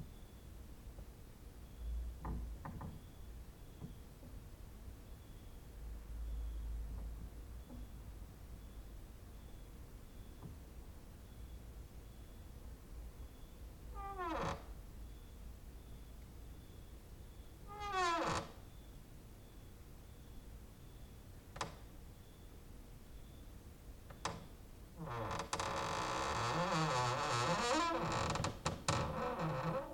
Mladinska, Maribor, Slovenia - late night creaky lullaby for cricket/14
cricket outside, exercising creaking with wooden doors inside